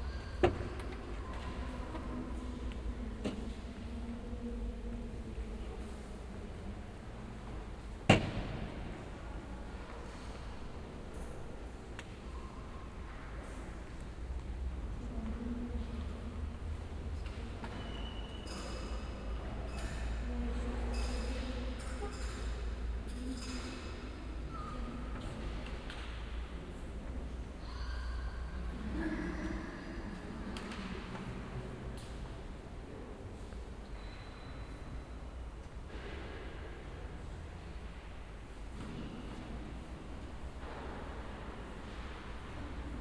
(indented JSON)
{
  "title": "Berlin Marienkirche - church ambience",
  "date": "2010-09-11 16:40:00",
  "description": "Marienkirche, church, ambience saturday afternoon, open for the public. binaural recording",
  "latitude": "52.52",
  "longitude": "13.41",
  "altitude": "49",
  "timezone": "Europe/Berlin"
}